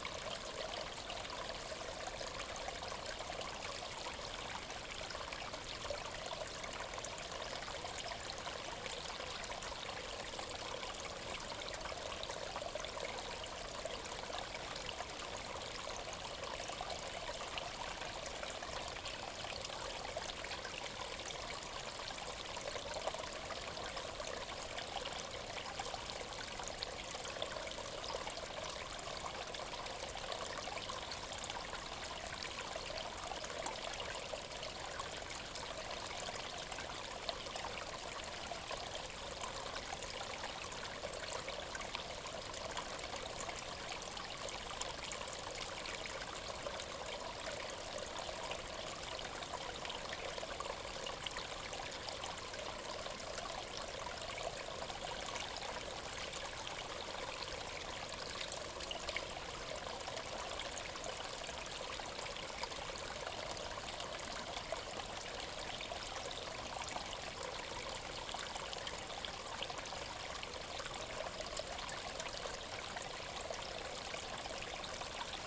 Trefaldighetsdalen, Sollefteå, Sverige - Trefaldighetsdalen
TREFALDIGHETSDALEN
Listen!
Follow the steep trail into the ravine
For each step the ravine embraces you
Breath new air!
Follow the red iron brook
Listen!
Birds, insects, fern leaves rustle
Step the footbridge, sit down on it near the well
Healing powers over centuries
Still now?
Listen!
They drank the water and washed their bodies here
Wells water´s totally clear, cool
flowing north joining iron brook water
Listen, and feel the water!
Breathe in! Breathe out!
Welcome to the well now! Sit down!
Put your hands in the water and wash yourself!
Then follow the brook down to the river
Västernorrlands län, Norrland, Sverige, July 2020